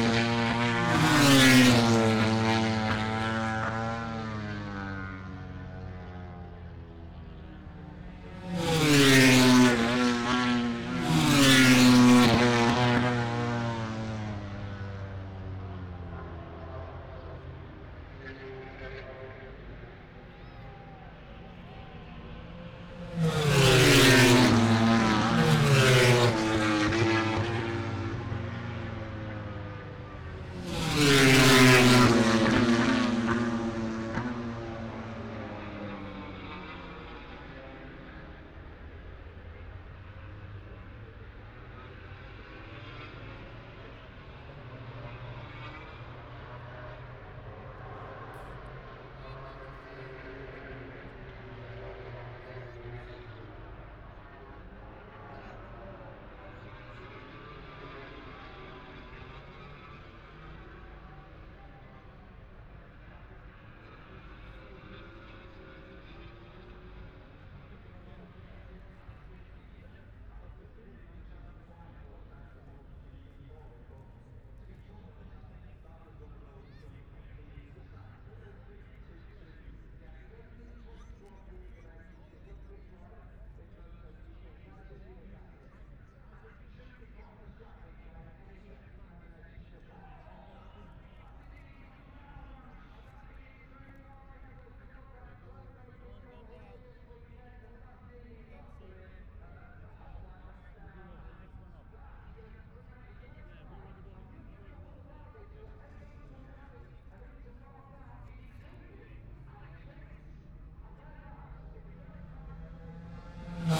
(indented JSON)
{
  "title": "Silverstone Circuit, Towcester, UK - british motorcycle grand prix ... 2021",
  "date": "2021-08-28 14:10:00",
  "description": "moto grand prix qualifying one ... wellington straight ... dpa 4060s to MixPre3 ...",
  "latitude": "52.08",
  "longitude": "-1.02",
  "altitude": "157",
  "timezone": "Europe/London"
}